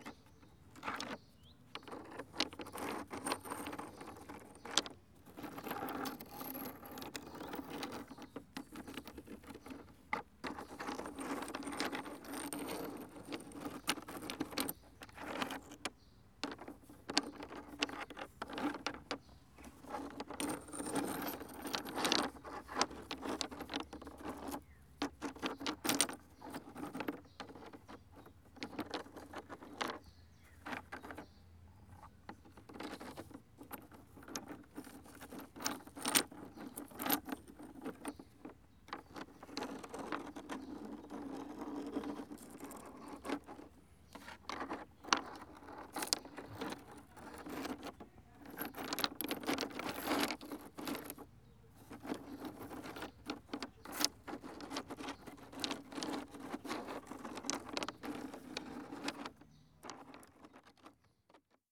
Srem, Andrzej's house front yard - stone poem
a plastic bucket full of stones. rough ones, smooths ones, dusty, clean. rummaging. writing stone sentences. tapping asymmetric rhymes. causing type three word twists. lying down a rocky lines.